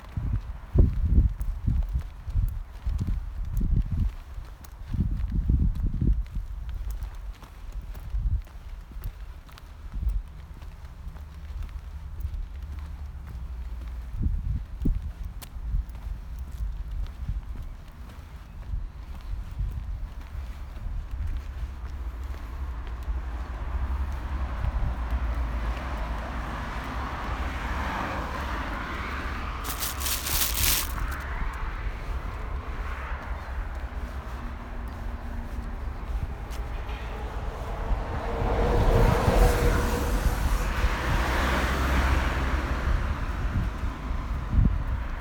Foerstrova, Brno-Žabovřesky, Česko - sounds of nature